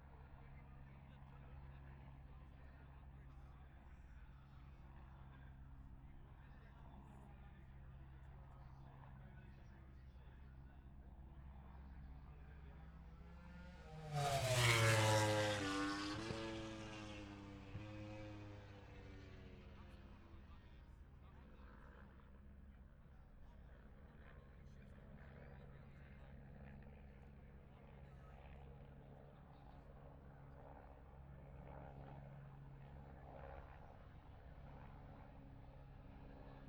Silverstone Circuit, Towcester, UK - british motorcycle grand prix 2021 ... moto grand prix ...
moto grand prix qualifying two ... wellington straight ... dpa 4060s to Zoom H5 ...
East Midlands, England, United Kingdom, August 2021